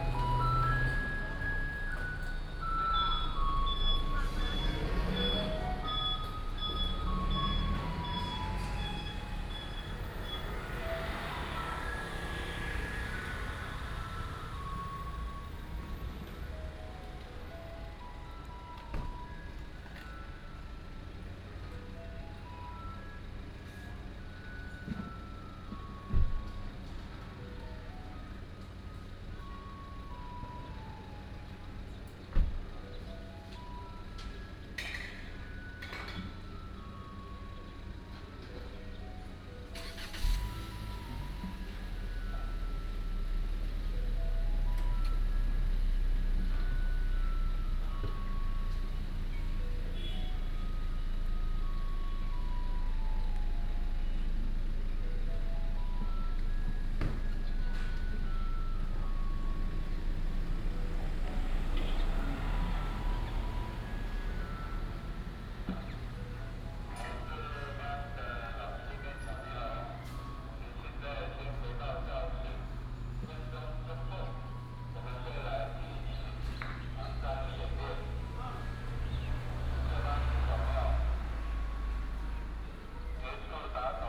In the square of the temple, Bird call, Garbage truck arrived, traffic sound, Primary school information broadcast, Binaural recordings, Sony PCM D100+ Soundman OKM II
Sanwan Township, Miaoli County, Taiwan, 15 September